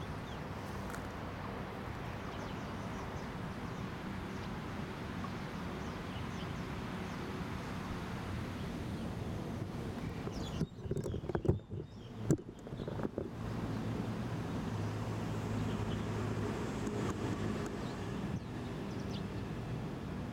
林口社區運動公園 - 疫情下的公園
三級疫情警戒下的公園沒有人群出沒，只有自然環境的聲音。
臺灣